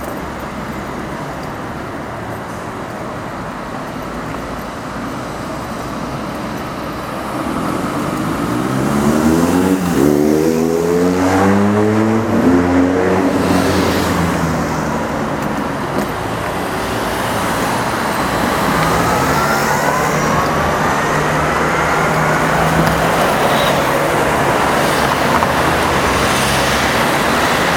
traffic in Milano, Italy - very heavy traffic under the railway bridge - acking ears
extremely heavy traffic in the morning rush hour in one of the principal ways of access to the city. A small sidewalk passes under the railway bridge. When traffic lights are green, cars echo in the tunnel and train passes over, decibels rise to unberable levels. Listen to this noxious recording.